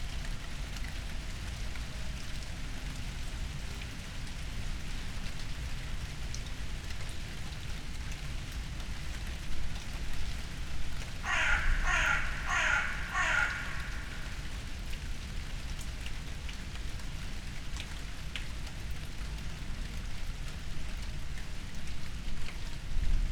Berlin Bürknerstr., backyard window - morning resonances
deep resonance by a car, wind, dry leaves, drops, crows